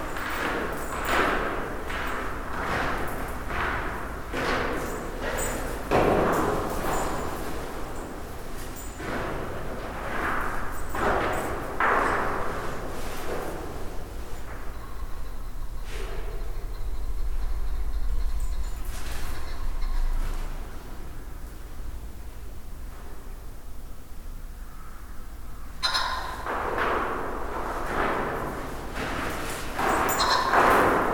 Weymouth, UK - inside St Catherines chapel
Walking around with a dog on a lead inside St Catherines chapel. The recorder was placed on a ledge in the Eastern window, microphones facing inwards, horizontally. Tascam DR05X and editing done in Audacity.